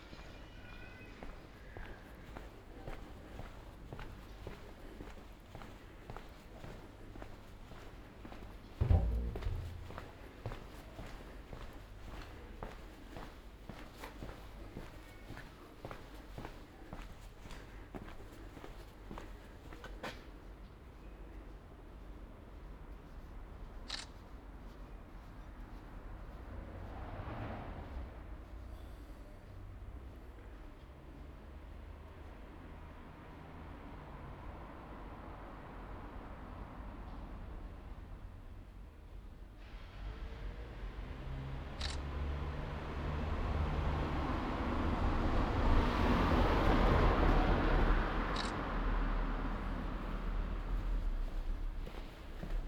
Ascolto il tuo cuore, città. I listen to your heart, city. Several Chapters **SCROLL DOWN FOR ALL RECORDINGS - “La flanerie aux temps du COVID19, un an après”: Soundwalk
“La flanerie aux temps du COVID19, un an après”: Soundwalk
Chapter CLXI of Ascolto il tuo cuore, città. I listen to your heart, city
Wednesday, March 10th, 2021. Same path as 10 March 2020, first recording for Chapter I: “walking in the movida district of San Salvario, Turin the first night of closure by law at 6 p.m.of all the public places due to the epidemic of COVID19.”
Start at 8:58 p.m., end at h. 9:29 p.m. duration of recording 31'31''
The entire path is associated with a synchronized GPS track recorded in the (kml, gpx, kmz) files downloadable here:
Torino, Piemonte, Italia